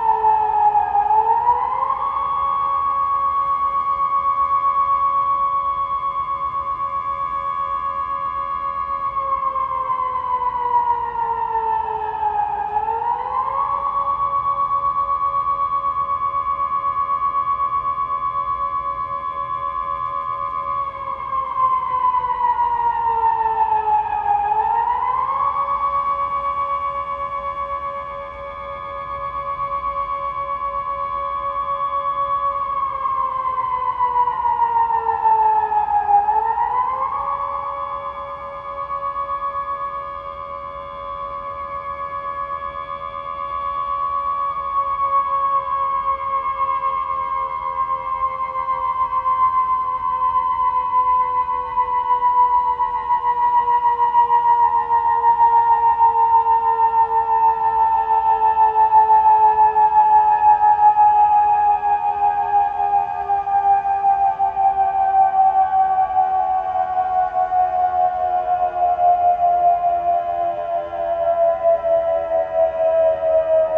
1er mercredi du mois
Couple ORTF DPA 4022 +Rycotte windjammer + SONOSAX + R4 PRO
La Rochelle, France